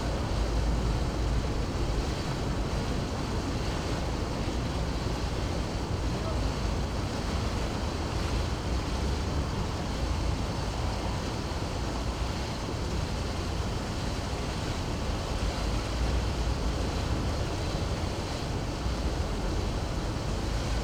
berlin, karolinenstraße: wehr - the city, the country & me: weir
the city, the country & me: march 19, 2011
Berlin, Germany, March 2011